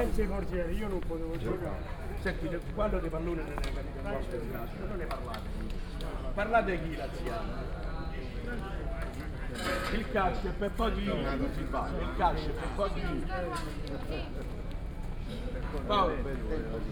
garbage man doing their work, talking, moving about their truck and another group of locals talking. (binaural)
Rome, Italy, 31 August